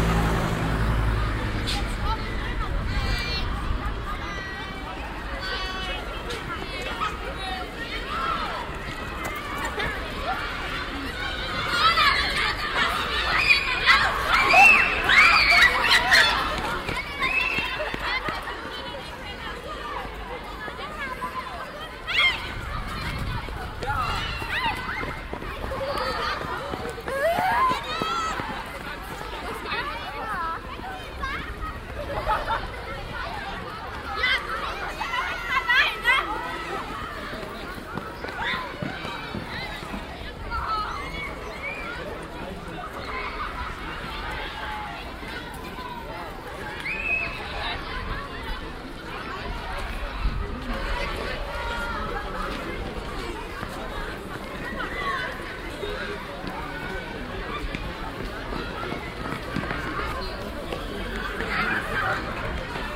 mettmann, schule, schüler in der pause - mettmann, schule, schueler in der pause
aufnahme in der schulpause auf dem schulgelaende
project: social ambiences/ listen to the people - in & outdoor nearfield recordings